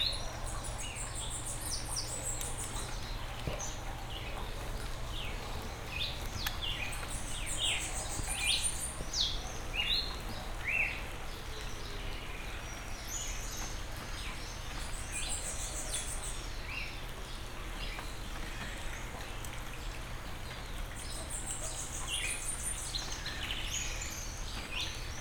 R. da Alegria - Lot. Nova Itaparica, Itaparica - BA, 44460-000, Brasilien - Dawn at Sacatar